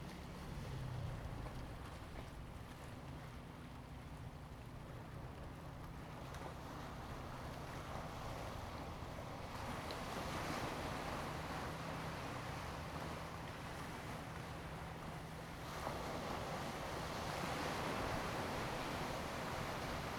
南福村, Hsiao Liouciou Island - On the coast
On the coast, Sound of the waves
Zoom H2n MS +XY